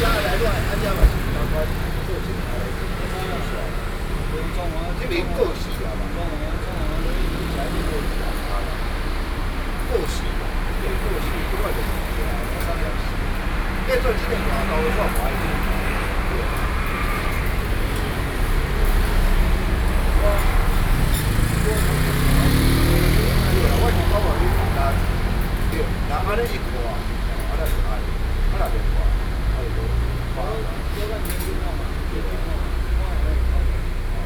中央北路, Beitou District, Taipei City - Around the corner